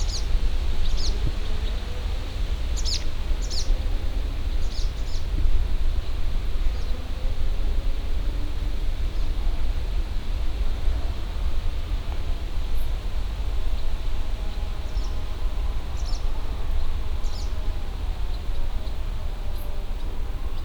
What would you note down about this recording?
(binaural recording) recorded at the river bank of Warta river. calm, quiet summer day. hum of the trees. birds circling over the water. chainsaw works on the other side of the river. ambulance horn pulsing through the whole recording. (roland r-07 + luhd PM-01bins)